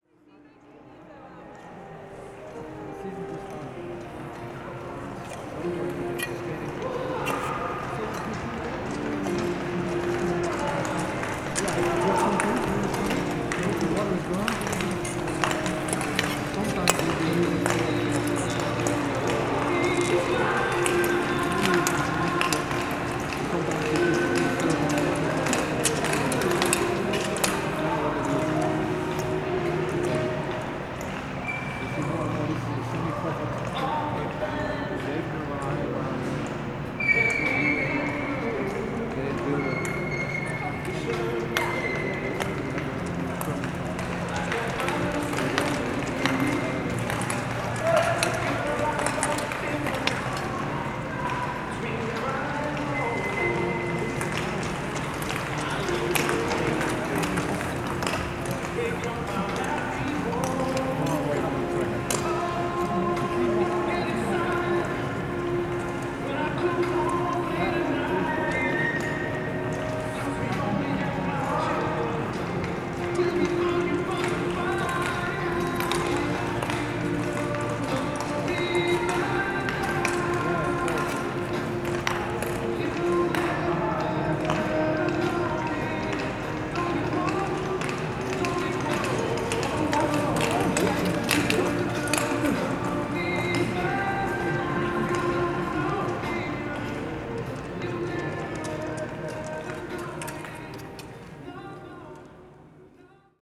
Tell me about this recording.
Olympus LS11/ Soundman OKMII binaural mics